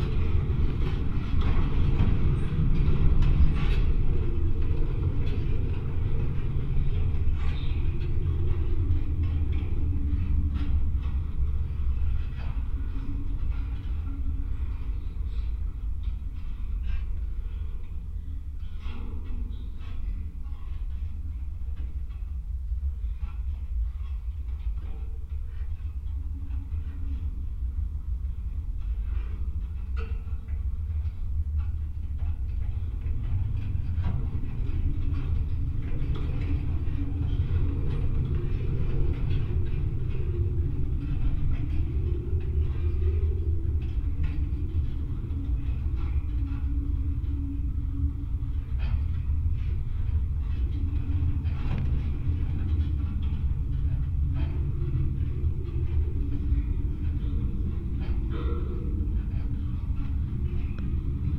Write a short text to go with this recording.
metallic fence quarding falloow deers territory. a pair of diy contact microphones.